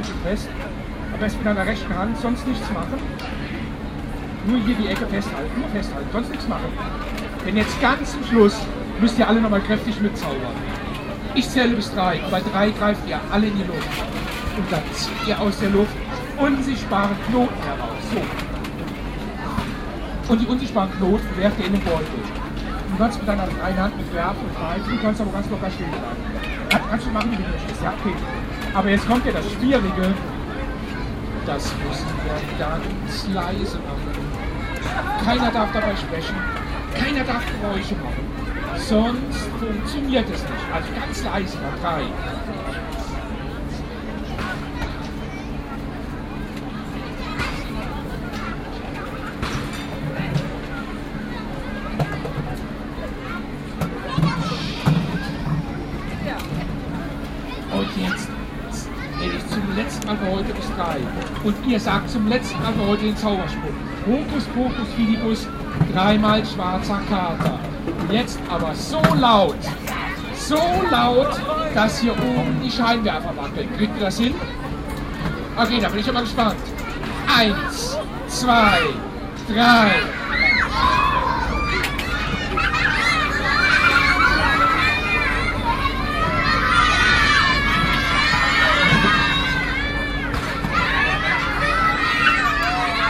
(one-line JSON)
{"title": "Löhrrondell, Magician, Koblenz, Deutschland - Löhrrondell 11, Magician", "date": "2017-05-20 11:56:00", "description": "Binaural recording of the square. Elenvth of several recordings to describe the square acoustically. At the children's day the square was full of entertainment for children, beyond others a magician whose show is audible. There is one omission since the children were too loud...", "latitude": "50.36", "longitude": "7.59", "altitude": "79", "timezone": "Europe/Berlin"}